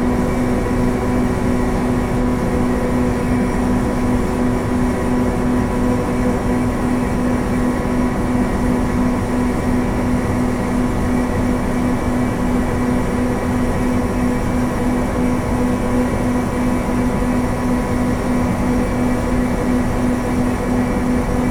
Tezno, Maribor, Slovenia - factory ventilation
en exit for a series of ventilation shaft at the side of the factory provided an intense slowly changing drone.
16 June